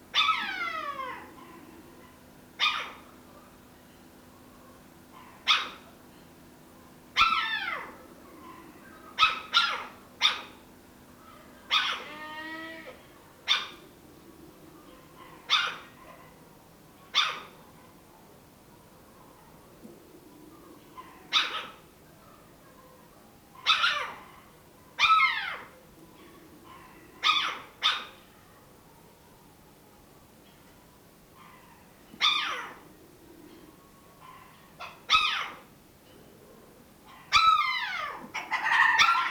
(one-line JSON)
{"title": "Nullatanni, Munnar, Kerala, India - dawn in Munnar - over the valley 4", "date": "2001-11-05 06:29:00", "description": "dawn in Munnar - over the valley 4", "latitude": "10.09", "longitude": "77.06", "altitude": "1477", "timezone": "Asia/Kolkata"}